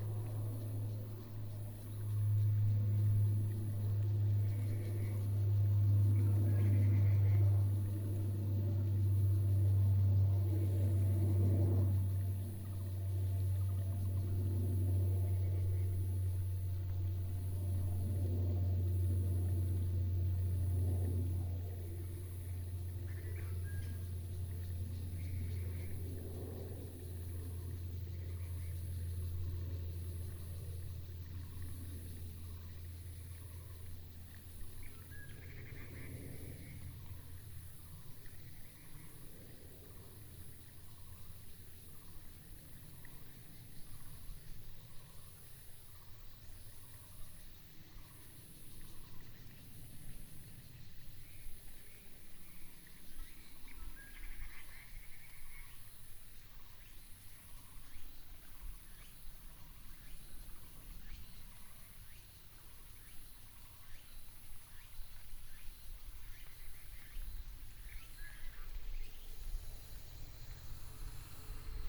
東眼產業道路, Sanxia Dist. - Bird call
traffic sound, Bird call, The plane flew through, In the mountains of the road side